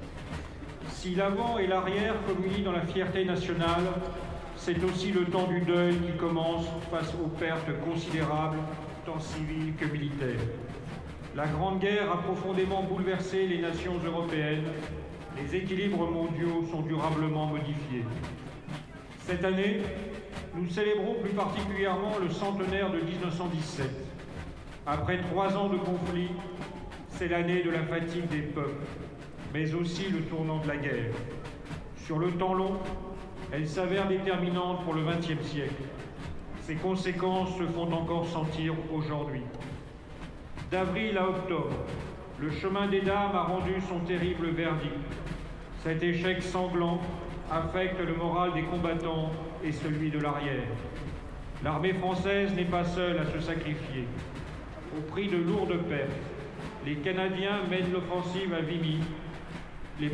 Fourneyron, Saint-Étienne, France - St-Etienne - 11/11/2017
St-Etienne - Loire
Place Fourneyron
Cérémonie du 11 novembre 2017